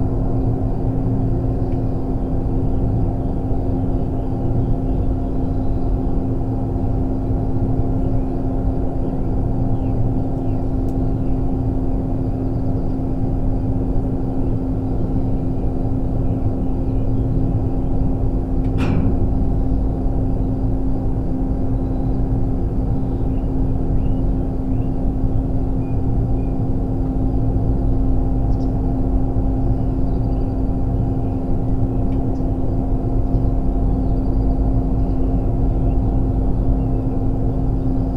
Kidricevo, Slovenia - inside factory gutter
again just a few meters away, this recording was made with a pair of miniature omnidirectional microphones placed inside a large enclosed drainpipe running the whole height of the building.